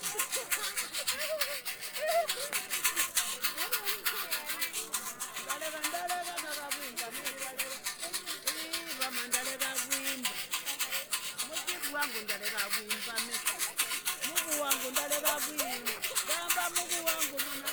Football pitch, Sinazongwe, Zambia - a dance with Muka-Moonga among the fans...
also Muka-Moonga is a regular at the pitch... you'll hear the sounds of the rattles from afar... when i pass she engages me in a dance... to the amusement of all around...
(muka-moonga is well known in the community, i enjoyed her dropping in at a number of our live broadcasts at Zongwe FM studio; she holds a lot of knowledge about Tonga culture, about the uses of local plants etc.)
Southern Province, Zambia, 14 July, ~17:00